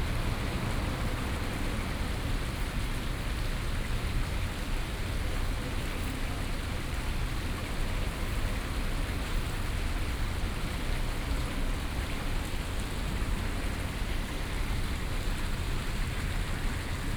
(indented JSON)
{
  "title": "Sec., Ren’ai Rd., Da’an Dist., Taipei City - Small stream pool",
  "date": "2015-07-24 13:46:00",
  "description": "Traffic Sound, Roadside small square",
  "latitude": "25.04",
  "longitude": "121.55",
  "altitude": "30",
  "timezone": "Asia/Taipei"
}